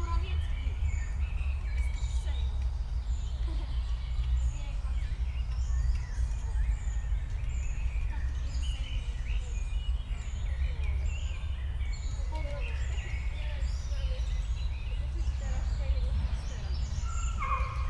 Park Górnik, Oświęcimska, Siemianowice Śląskie - church bells, park ambience
churchbells heard in Park Górnik, park ambience, distant rush hur traffic drone
(Sony PCM D50, DPA4060)
Oświęcimska, Siemianowice Śląskie, Poland